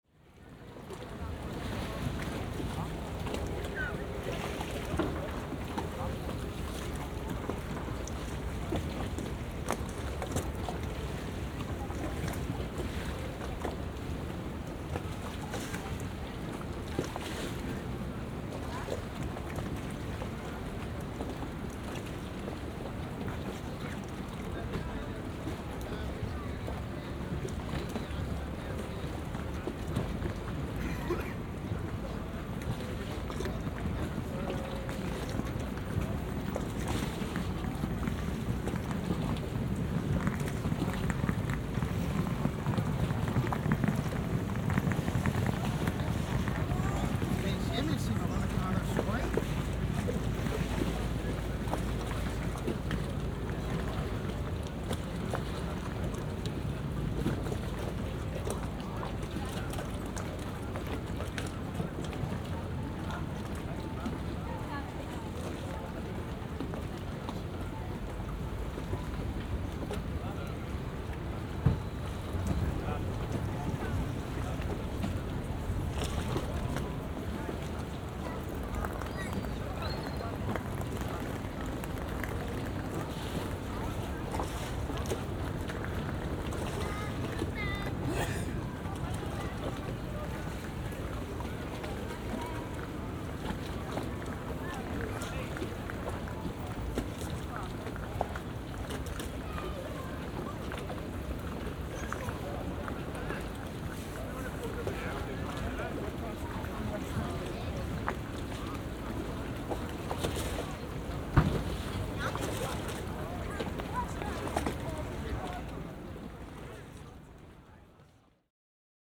San Marco, Wenecja, Włochy - Gondolas bobbing on the waves
Gondolas bobbing on the waves ( binaural)
OLYMPUS LS-100
Venezia, Italy, 12 December 2016, 12:14